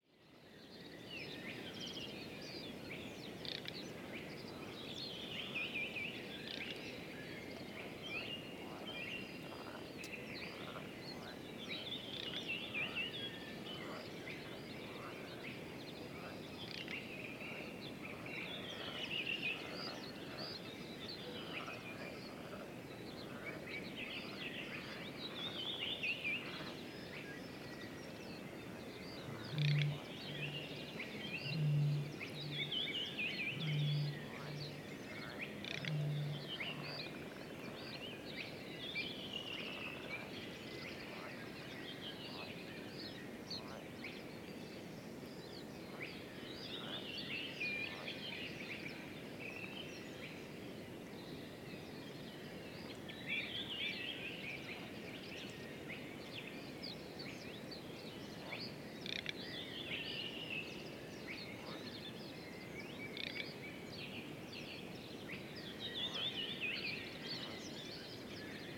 Dawn chorus in the bog, south Estonia
eurasian bittern and other birds out in the bog